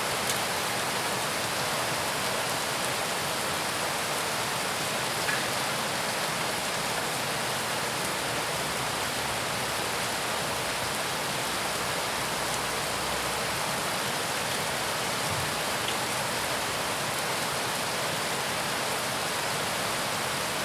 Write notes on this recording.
Regen und Hagel.Rain and Hail.